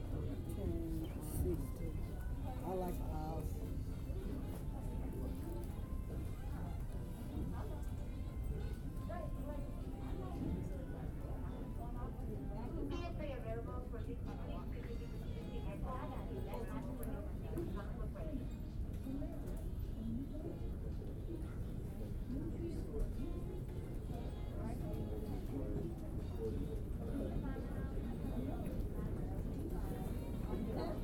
Concourse D, Georgia, USA - Waiting At The Gate
Waiting for a flight at gate D16 of the Hartsfield-Jackson Atlanta International Airport. You can hear all the typical airport sounds: lots of people walking back and forth, rolling suitcases, and various PA announcements from the surrounding gates.
This audio was captured with a special application that allows the user to disable all noise reduction and processing on the stock microphones of various android devices. The device used to capture the audio was a Moto G7 Play, and the resulting audio is surprisingly clear and lifelike. EQ was done in post to reduce some treble frequencies.